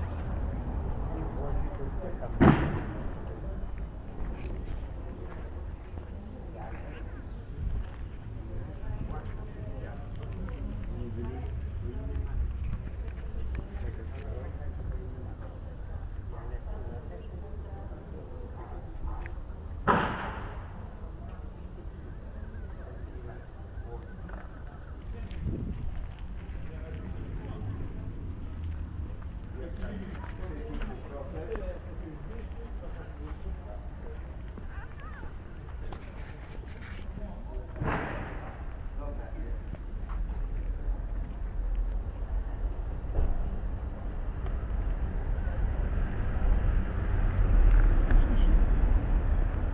Mikolajczyka, Szczecin, Poland
Sounds of renovation.
25 September 2010